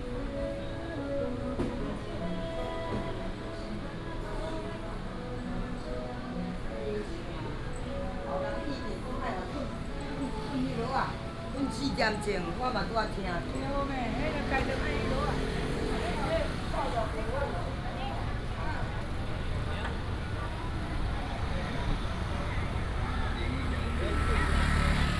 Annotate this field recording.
Walking in the traditional market area, traffic sound